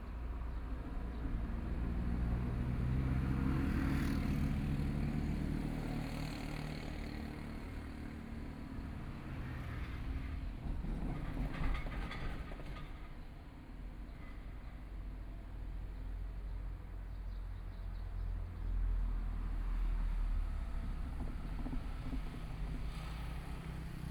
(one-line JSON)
{"title": "Deyang Rd., Jiaoxi Township - In the railway level crossing", "date": "2014-07-26 18:49:00", "description": "Traffic Sound, In the railway level crossing, Trains traveling through, Small village\nSony PCM D50+ Soundman OKM II", "latitude": "24.83", "longitude": "121.77", "altitude": "7", "timezone": "Asia/Taipei"}